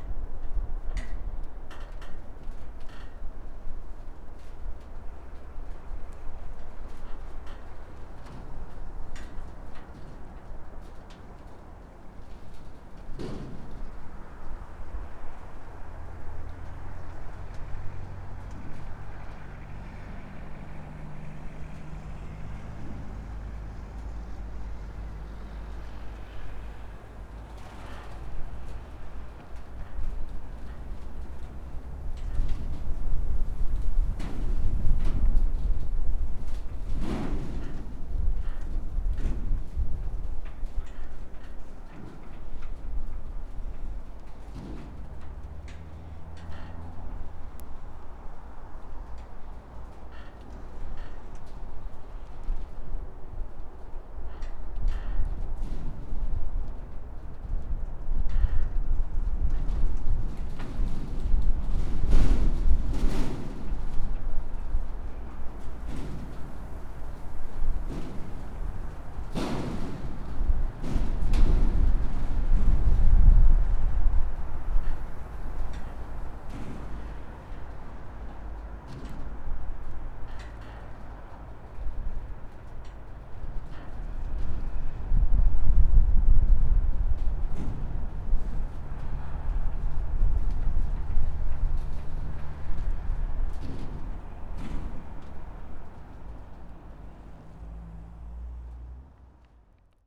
Lithuania, Utena, wind and metallic billboard
windshears on a big metallic billboard